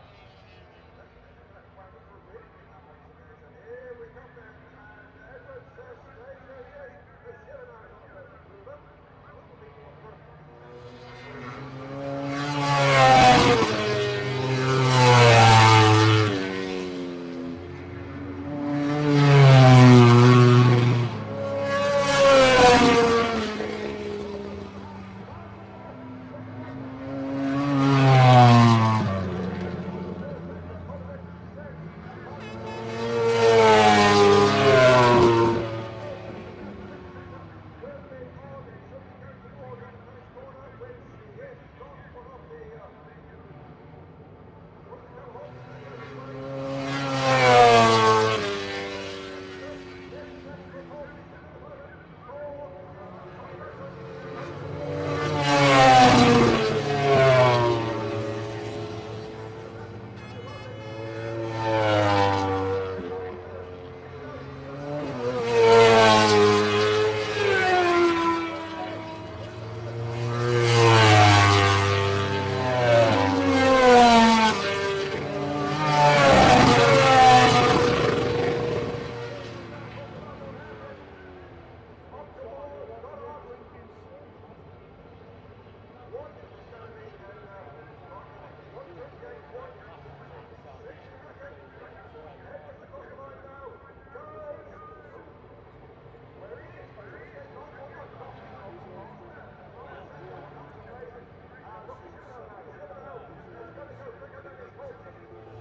Unnamed Road, Derby, UK - british motorcycle grand prix 2005 ... moto grand prix ... ...
british motorcycle grand prix 2005 ... moto grand prix qualifying ... one point sony stereo mic to minidisk ...
England, United Kingdom, 23 August 2005, 14:00